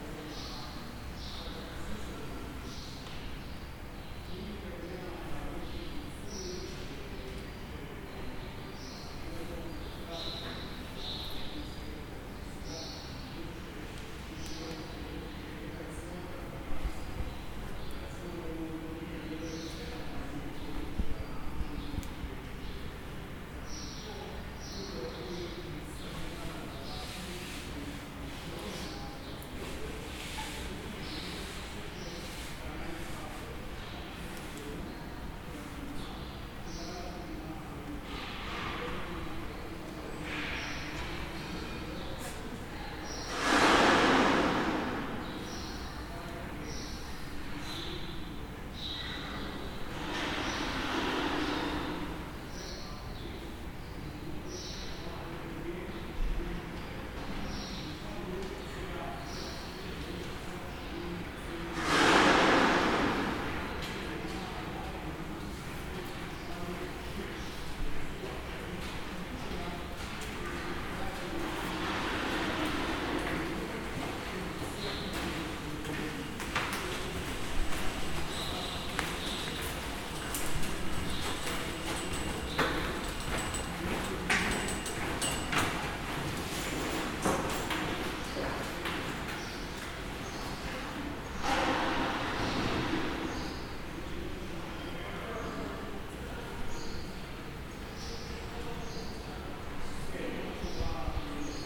Corridor at hebrew university.